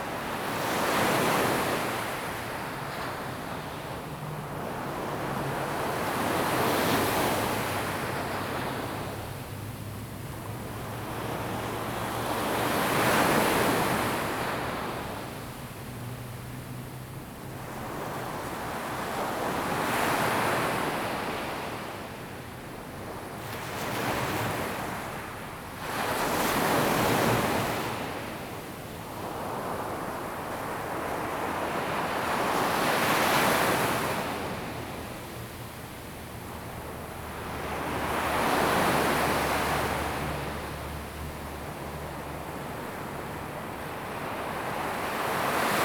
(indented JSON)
{
  "title": "淺水灣, 三芝區後厝里, New Taipei City - At the beach",
  "date": "2016-04-15 07:28:00",
  "description": "birds sound, Sound of the waves\nZoom H2n MS+H6 XY",
  "latitude": "25.25",
  "longitude": "121.47",
  "altitude": "20",
  "timezone": "Asia/Taipei"
}